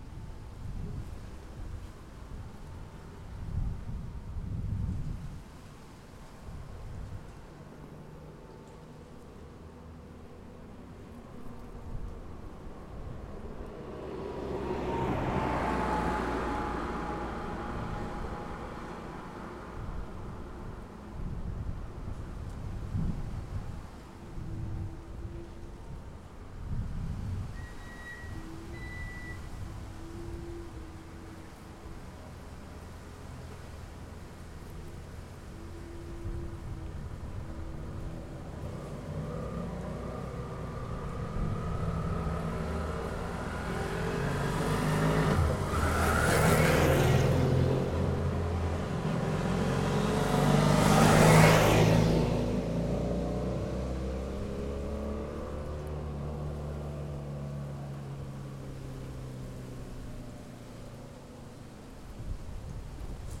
Carretera General Arico Viejo, Arico Viejo, Santa Cruz de Tenerife, Hiszpania - The center of Arico Viejo
An ambient from a town located on Tenerife Island recorded from a bench. Cars passing by. Birds tweeting. Recording starts from opening a can of beer and finishes when the beer is over.
Santa Cruz de Tenerife, Spain, February 2019